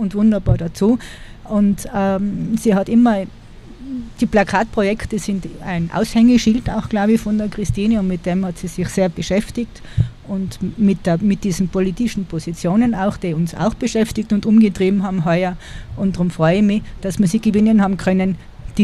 Innstraße, Innsbruck, Österreich - vogelweide 2018
Eröffnung Premierentage 2018: Not just for Trees, Christine S. Prantauer